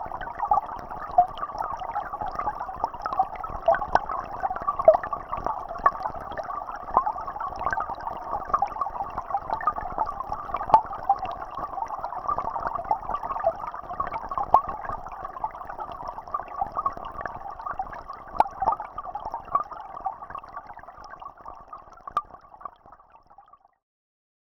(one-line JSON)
{"title": "Birštonas, Lithuania, inside mineral water evaporation tower - mineral water stream", "date": "2022-06-18 19:15:00", "description": "Hydrophone in mineral water stream", "latitude": "54.61", "longitude": "24.03", "altitude": "65", "timezone": "Europe/Vilnius"}